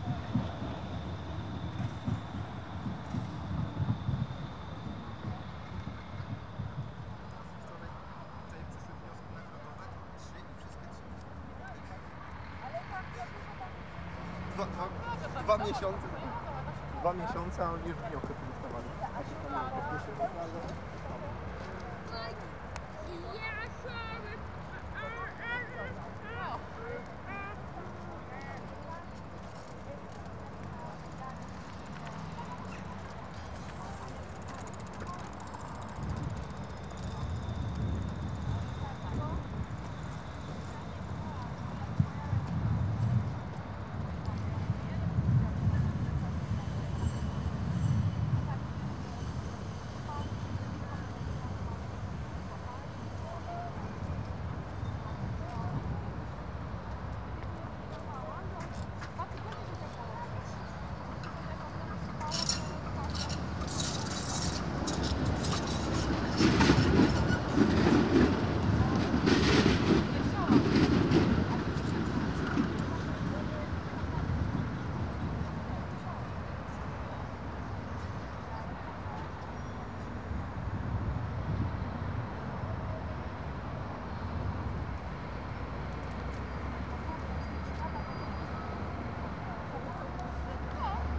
Poznan, Piatkowo, Sobieskiego tram loop - trams on the loop
trams creaking on the loop, passers-by.
Poznań, Poland